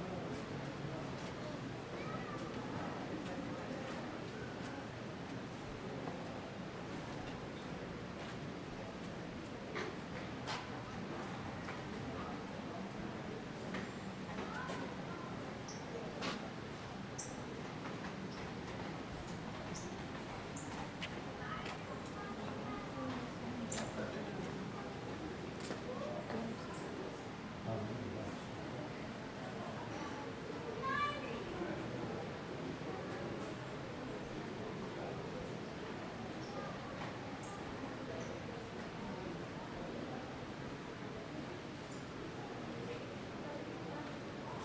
Pl. des Vosges, Paris, France - AMB PARIS EVENING PLACE DES VOSGES UNDER PORCH MS SCHOEPS MATRICED
This is a recording under a porch which surrounded the famous 'Place des Vosges' located in the 3th district in Paris. I used Schoeps MS microphones (CMC5 - MK4 - MK8) and a Sound Devices Mixpre6.
France métropolitaine, France, 22 February 2022, ~19:00